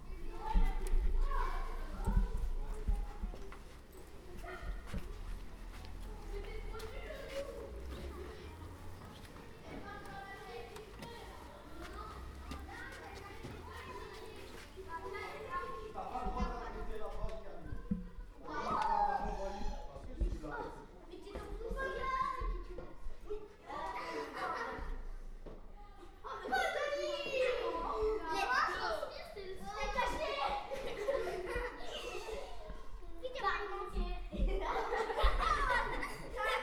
Schiltigheim, France - Le gymnase pendant le cours de sport
Recorded by the children of Mermoz School in Strasbourg, using a parabolic reflector and Zoom H1 recorder, they went spying on the gym session, during the afterschool program.